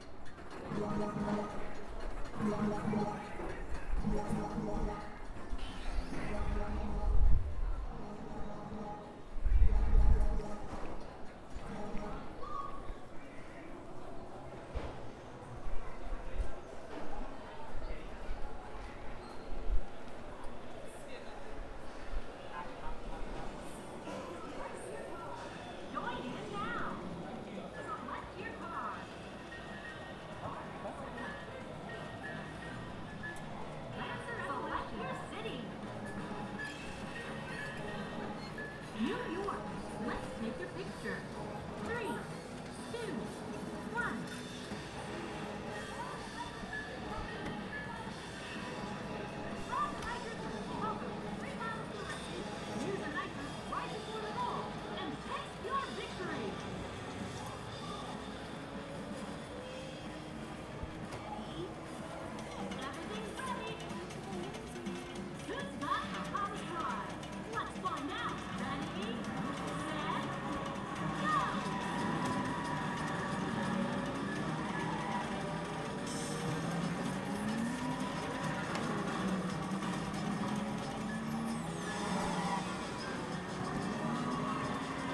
Rua Domingos Agostim - Cidade Mãe do Céu, São Paulo - SP, 03306-010, Brasil - AMBIÊNCIA PLAYLAND SHOPPING METRÔ TATUAPÉ
AMBIÊNCIA NO PARQUE DE DIVERSÕES PLAYLAND NO SHOPPING METRÔ TATUAPÉ, COM GRAVADOR TASCAM DR40, REALIZADO NUM AMBIENTE FECHADO, COM NÚMERO REDUZIDO DE PESSOAS, EM MOVIMENTO E COM SONS DE BRINQUEDOS E JOGOS ELETRÔNICOS .